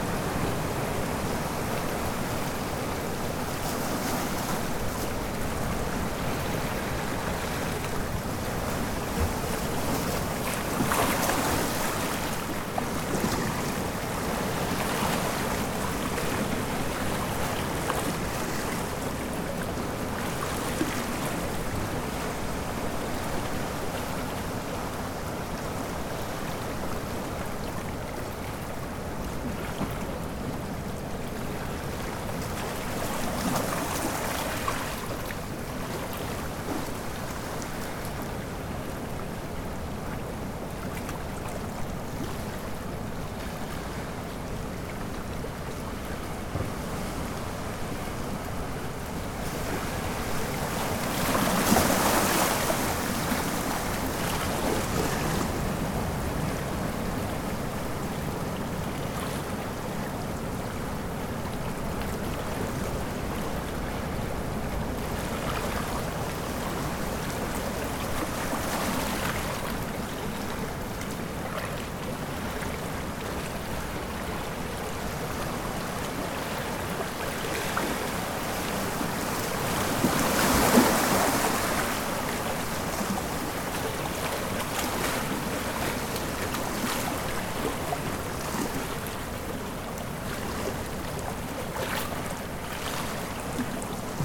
Pedras da Ponta Norte da praia da Lagoinha, Ubatuba - SP, 11680-000, Brasil - Praia da Lagoinha - Pedras da Ponta Norte
Recording performed on the stones of the northern tip of Lagoinha beach. Near the mouth of the river Lagoinha and the beginning of the trail to the beach of Bonete. A TASCAM DR 05 digital recorder was used. Cloudy day with high tide.